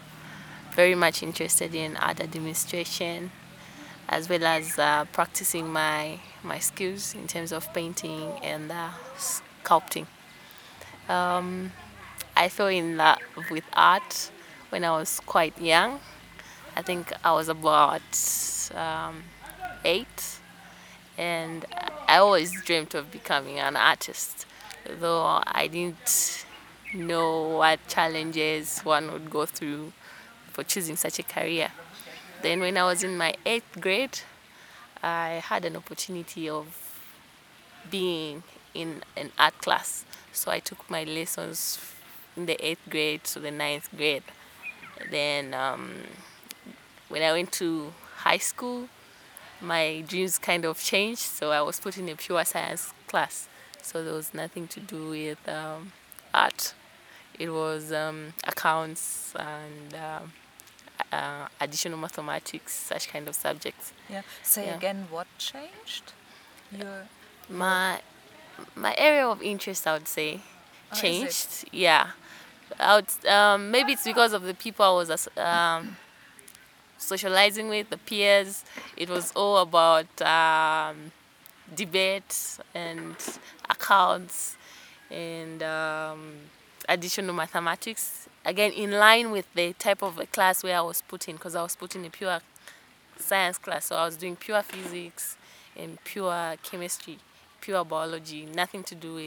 The Garden Club, Lusaka, Zambia - I’ve managed to survive…
We’ve reached the garden café with Mulenga Mulenga, settling down under a tree near the fountain pont. Mulenga gives us a vivid picture of the struggles, challenges and the triumphs of a young woman in Zambia determined to survive as a visual artist….
playlist of footage interview with Mulenga
20 July 2012, 16:39